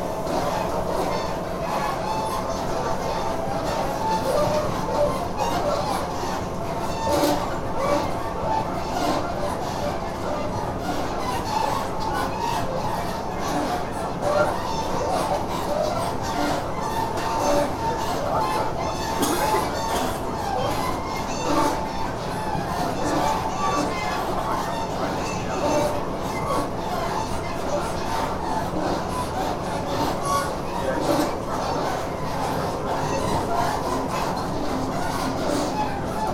on the train to Mascot Station - junction of compartment